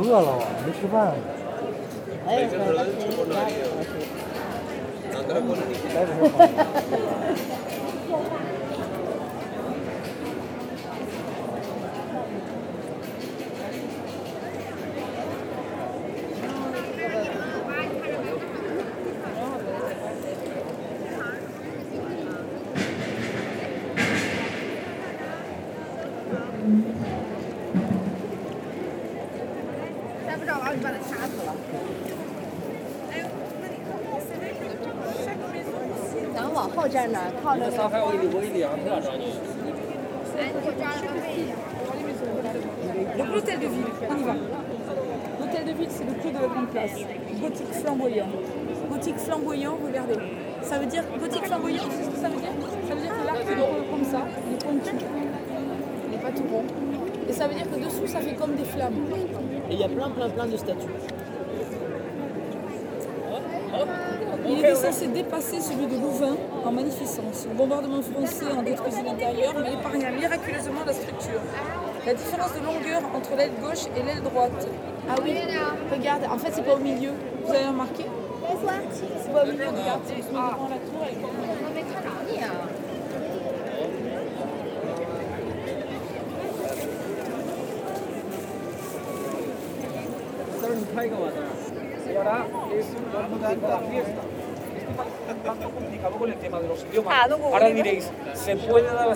Bruxelles, Belgium - Brussels Grand Place
The Brussels Grand-Place (french) Grote Markt (dutch). It's the central place of Brussels, completely covered with cobblestones. Very beautiful gothic houses and the main town hall. During this period, very much Spanish and Chinese tourists. An old woman, beggar. Photos, discussions, wind, touristic ambiance.